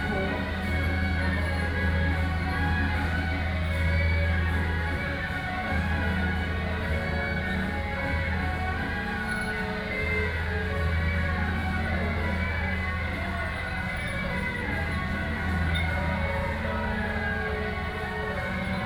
temple fair, “Din Tao”ßLeader of the parade